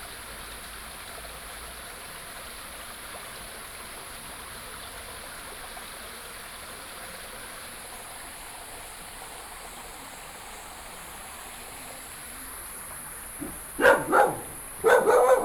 Ln., Zhonghe St., Shulin Dist. - Walking along the stream
Walking along the stream, Insects sounds, Bird calls, Dogs barking
Binaural recordings
Sony PCM D50 + Soundman OKM II